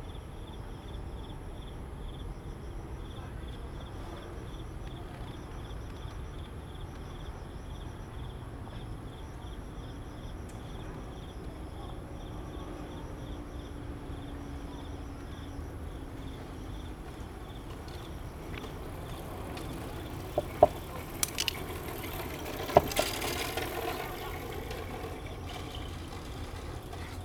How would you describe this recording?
Insect sounds, Traffic Sound, MRT trains through, Footsteps, Bicycle sound, Zoom H2n MS+XY +Sptial Audio